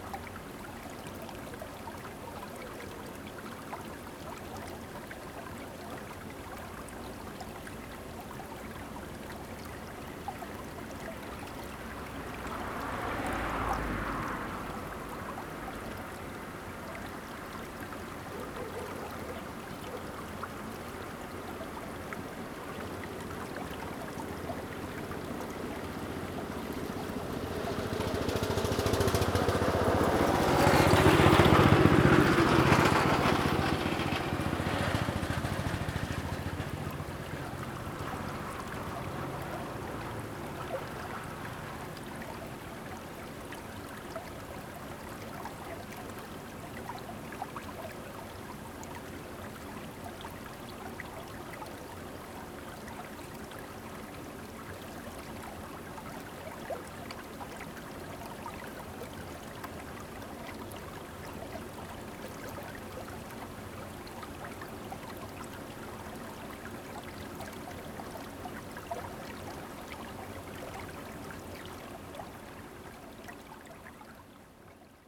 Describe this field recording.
Stream, Traffic Sound, Irrigation waterway, Zoom H2n MS+ XY